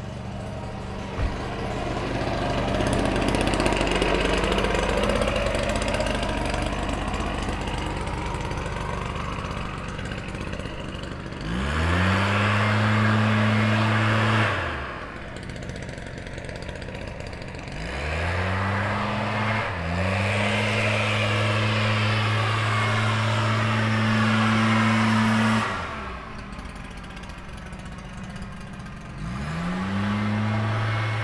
neoscenes: construction, lawn mowers, and blowers